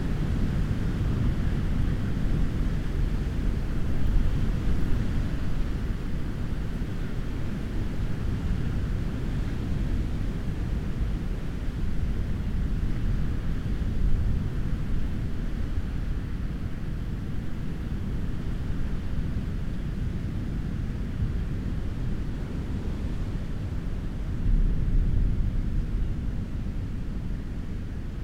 Tintagel, Cornwall, UK - Waves at Tintagel Bay
Recorded with a Zoom H4N and electret microphones placed down a rabbit hole.
26 December